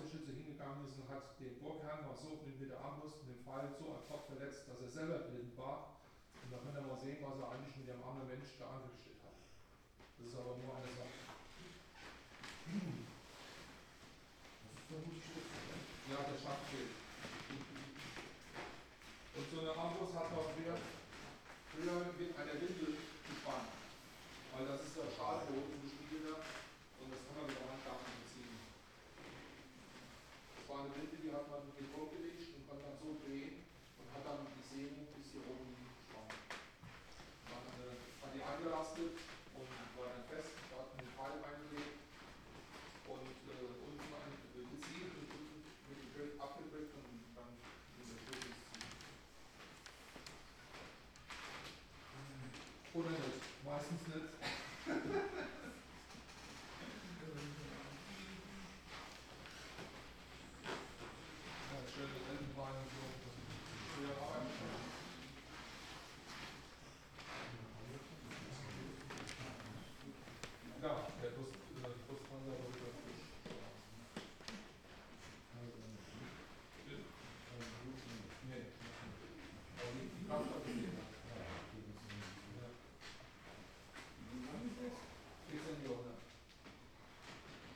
guided tour through sooneck castle (4), visitors gliding on overshoes to the next room, guide continues the tour
the city, the country & me: october 17, 2010